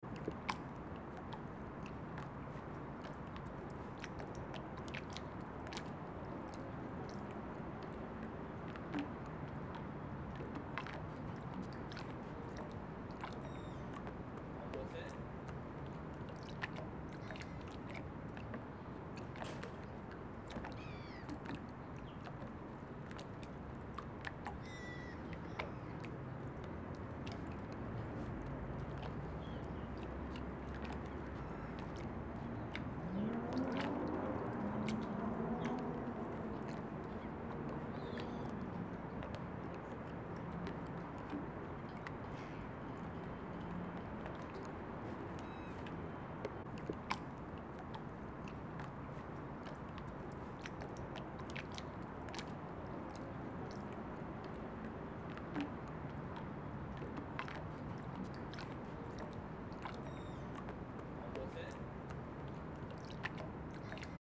Neustadt, Hamburg, Deutschland - Tiny waves, humming of the city
Tiny waves clicking against the hull of a ferryboat